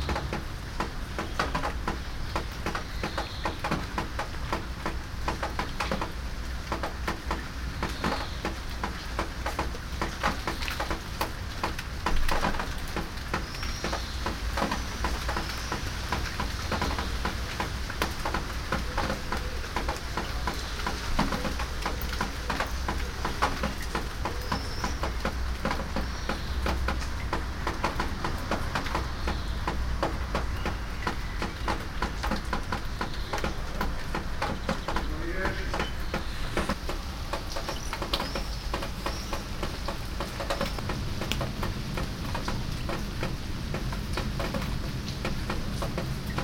tilburg, cloister garden, rain
international soundmap : social ambiences/ listen to the people in & outdoor topographic field recordings
Tilburg, The Netherlands, 22 June 2009